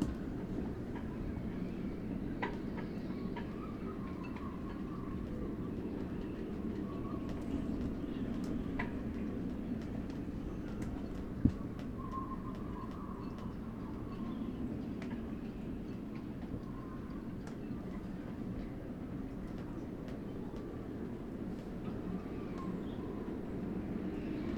workum, het zool: marina, berth h - the city, the country & me: marina, aboard a sailing yacht
wind flaps the tarp
the city, the country & me: july 18, 2009
Workum, The Netherlands